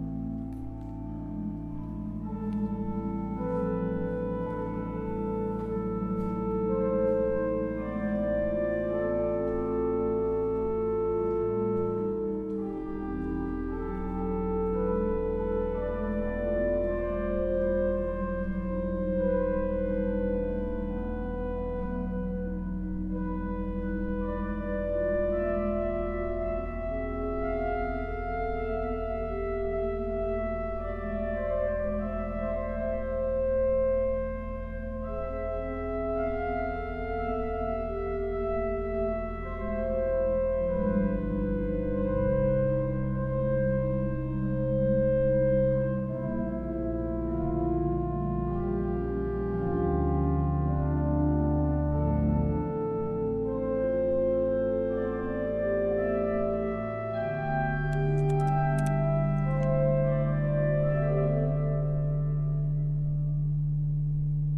Höchst, Frankfurt, Germany - organ practice
organ practice for concert on the following Sunday, after the opening of EAST meets WEST